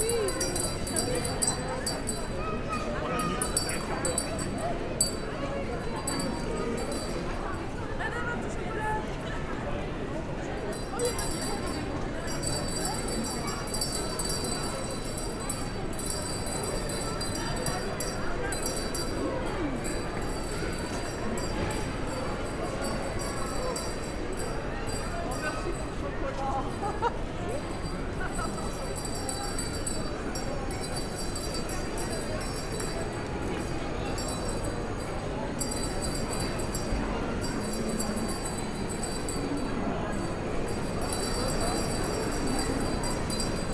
Gare Montparnasse, Paris, France - Santa-Claus in the station
Santa-Claus is giving chocolates in the very busy Paris Montparnasse station.
23 December 2016